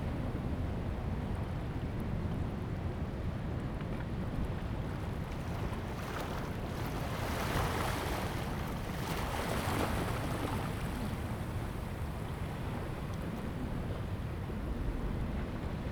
At the fishing port, wave, wind
Zoom H2n MS+XY